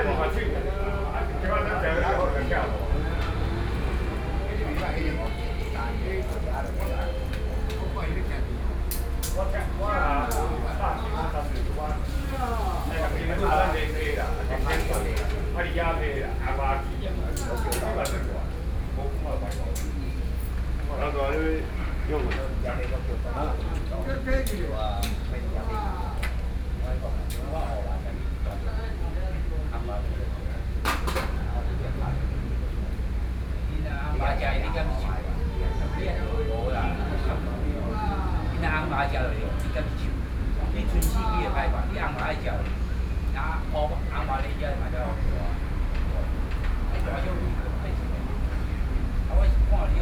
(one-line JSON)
{"title": "Beitou Park, Taipei City - Night in the park", "date": "2013-08-24 20:08:00", "description": "Old people playing chess, Behind the traffic noise, Sony PCM D50 + Soundman OKM II", "latitude": "25.14", "longitude": "121.51", "altitude": "24", "timezone": "Asia/Taipei"}